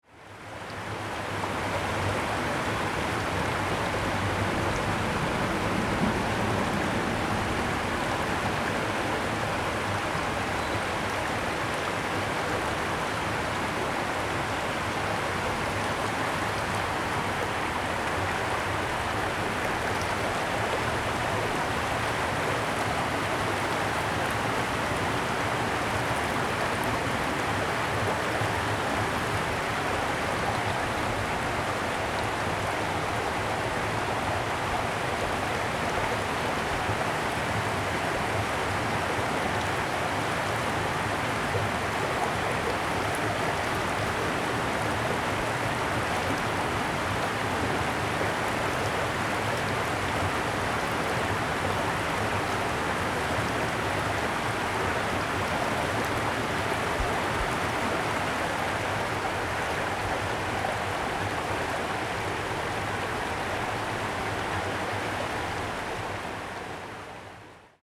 {"title": "Sec., Xingnan Rd., Zhonghe Dist., New Taipei City - Small Streams", "date": "2012-02-14 14:29:00", "description": "Small Stream, Traffic Sound\nSony Hi-MD MZ-RH1 +Sony ECM-MS907", "latitude": "24.98", "longitude": "121.50", "altitude": "34", "timezone": "Asia/Taipei"}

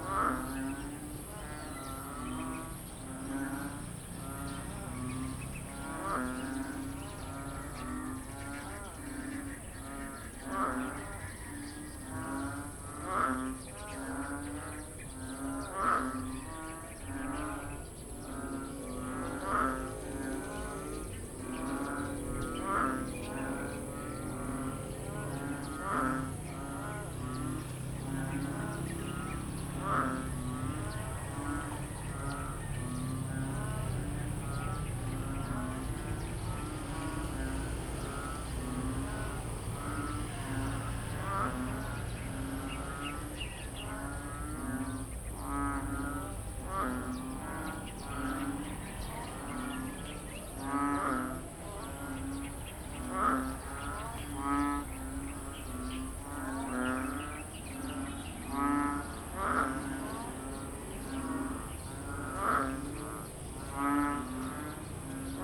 {"title": "San Francisco, Biñan, Laguna, Filippinerna - Biñan Palakang Bukid #3", "date": "2016-07-17 13:06:00", "description": "Some day after heavy rain, there is less activity of the frogs in the neighbouring fields, still accompanied by motor sounds from the nearby Halang Rd with tricycles, cars and motorcycles. Palakang bukid is the filipino name of this frog.", "latitude": "14.33", "longitude": "121.06", "altitude": "13", "timezone": "Asia/Manila"}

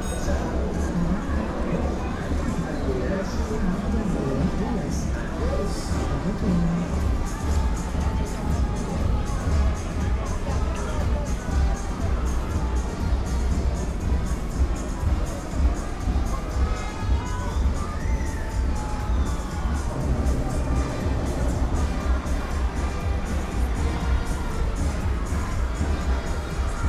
{"title": "Brüssel, Belgien - hommage à charles ives", "date": "2014-07-19 16:00:00", "description": "hommage à charles ives, bruxelles", "latitude": "50.84", "longitude": "4.34", "altitude": "24", "timezone": "Europe/Brussels"}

